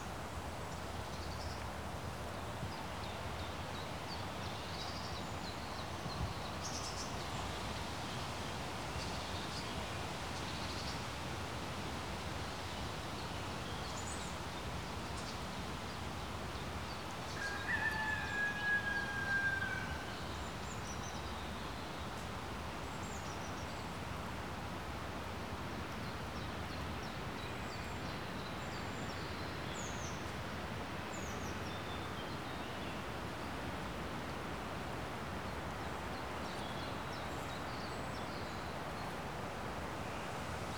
Poznan, Naramowice district, nature reserve "Zurawiniec - at the pond
a stop at a quiet pond covered with duckweed and surrounded by thick bushes. big, dense trees pouring a rich, fading swoosh with thousands of their small leaves. sparse bird chirps, a rooster from a nearby farm. at one point a shriveled leaf fell down on the recorder.